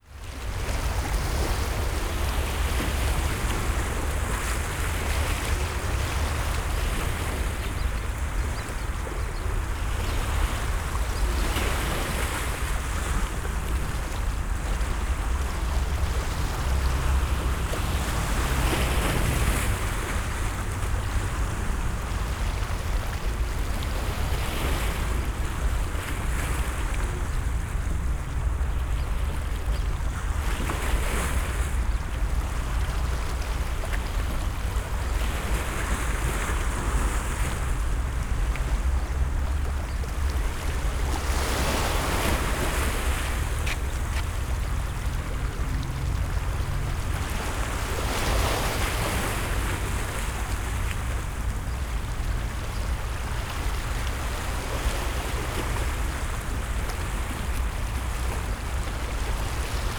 Athen, Palaio Faliro, Leof. Posidonos - beach, waves
waves lapping at the beach
(Sony PCM D50, DPA4060)